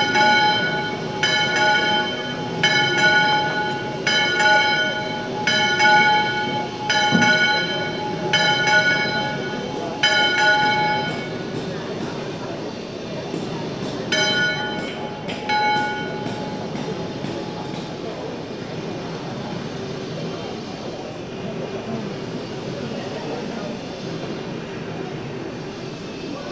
Noon bell of the Church of El Salvador, Nerja, Spain